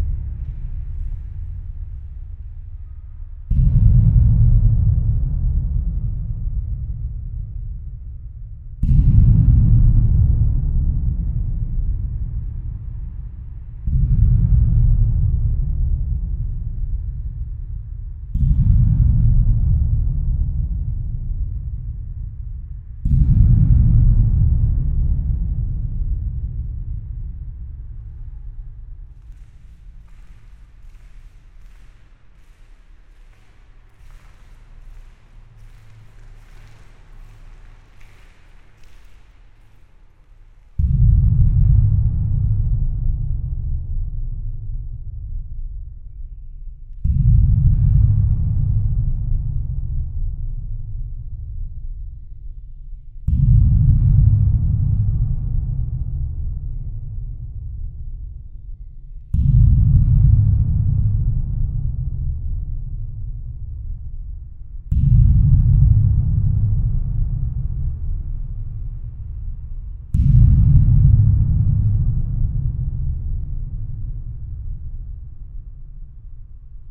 Neufchâteau, Belgique - Cistern
Two cisterns lie near the road. These two dead objects are for sale. One is open, it's possible to enter in.
My friends let me 30 seconds to record something, so I botched a recording. This is a John Grzinich like sounding object. I will urgently go back inside.
Neufchâteau, Belgium, 9 June, 09:40